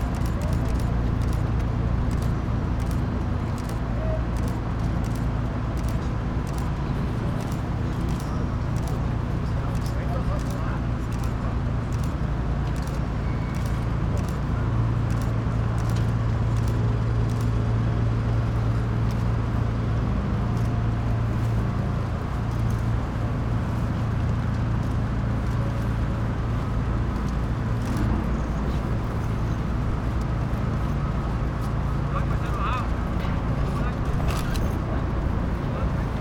workers lifting Friedrich Engels from his place at Marx-Engels-Forum. because of the planned new subway U5, Marx and Engels have to move about 150m to a temporary home. at this place, they will look straight west instead of east as before. many journalists are present.
Berlin, Deutschland, September 2010